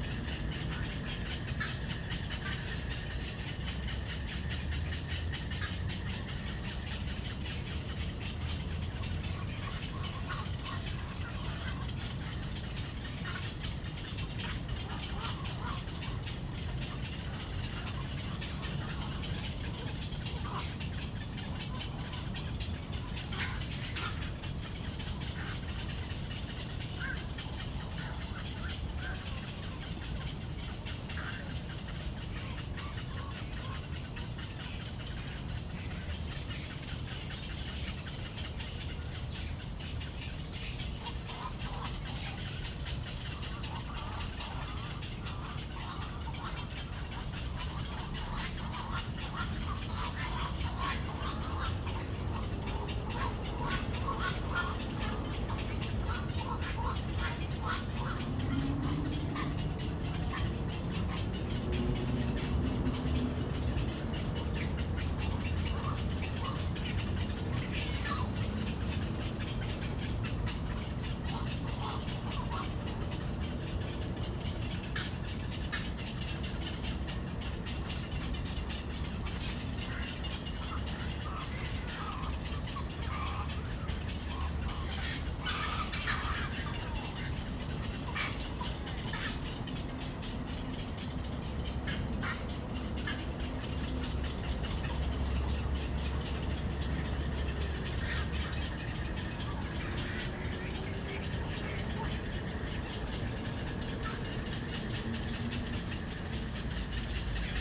Daan Forest Park, Da'an District, Taiwan - Bird calls
Bird calls, Ecological pool, in the park
26 June 2015, 22:03